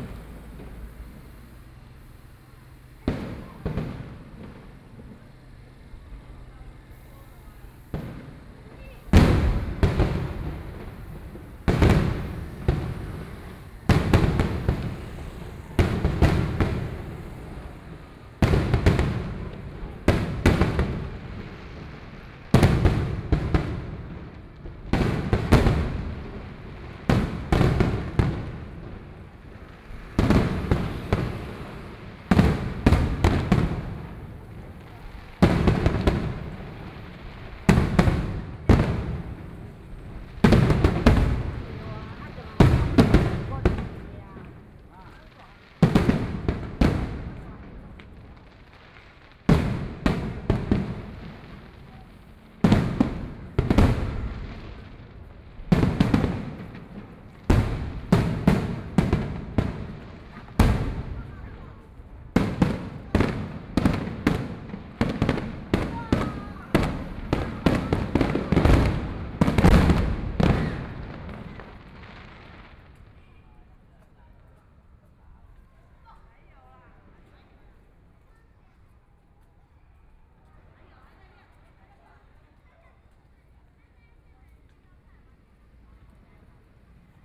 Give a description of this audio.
Traditional Festivals, Fireworks sound, Traffic Sound, Please turn up the volume a little. Binaural recordings, Sony PCM D100+ Soundman OKM II